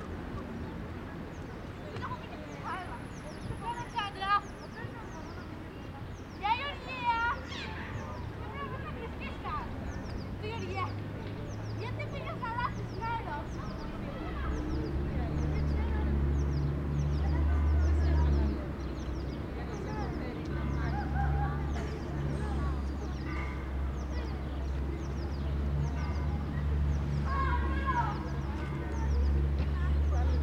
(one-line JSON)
{"title": "Spianada, Corfu, Greece - Spianada Square - Πλατεία Σπιανάδας", "date": "2019-03-26 12:06:00", "description": "Children are playing. The bell of St Spyridon is tolling. The square is surrounded by Kapodistriou and Agoniston Politechniou street.", "latitude": "39.62", "longitude": "19.92", "altitude": "15", "timezone": "Europe/Athens"}